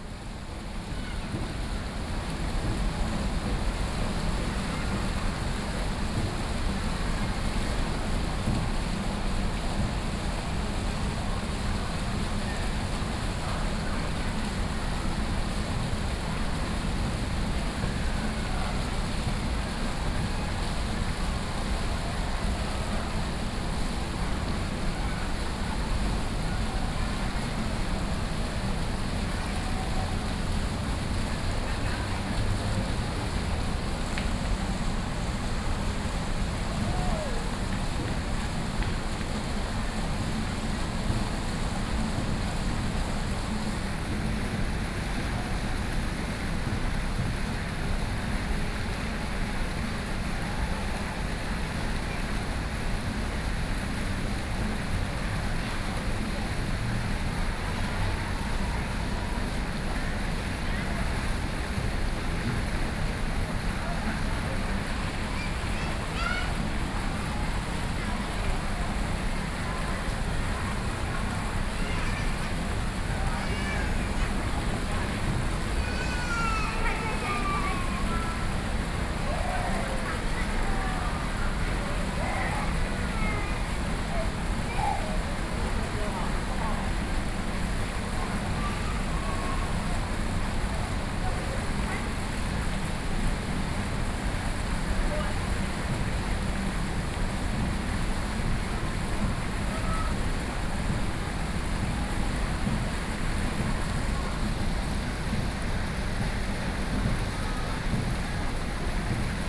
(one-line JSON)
{"title": "Banqiao District, New Taipei City - In the plaza", "date": "2013-10-12 15:15:00", "description": "In the plaza outside the government building, Pool sound, Students practice dance music, Binaural recordings, Sony Pcm d50+ Soundman OKM II", "latitude": "25.01", "longitude": "121.47", "altitude": "12", "timezone": "Asia/Taipei"}